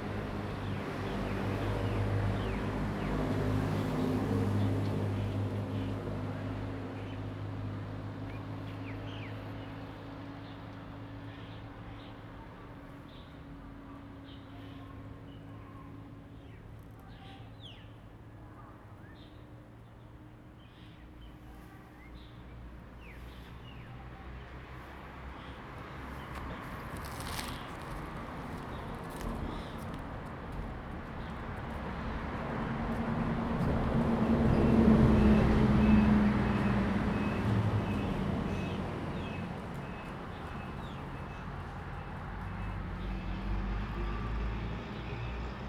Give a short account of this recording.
Birds singing, Traffic Sound, Zoom H2n MS +XY